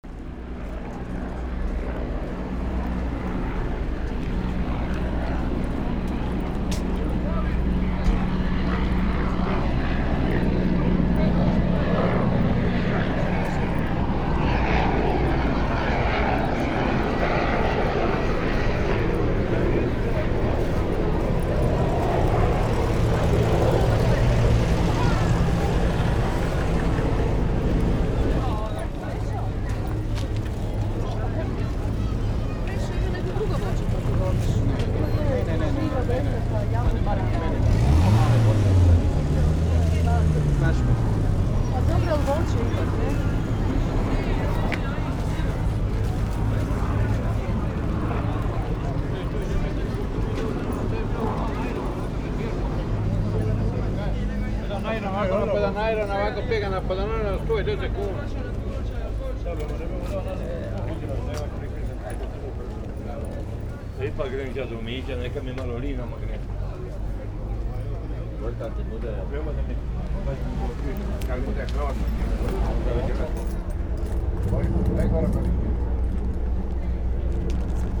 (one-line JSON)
{"title": "Island Hvar, helicopters above Stari Grad - low flight", "date": "1996-08-28 11:02:00", "description": "poeple in the street and cafes, crickets, helicopters", "latitude": "43.18", "longitude": "16.60", "altitude": "3", "timezone": "Europe/Zagreb"}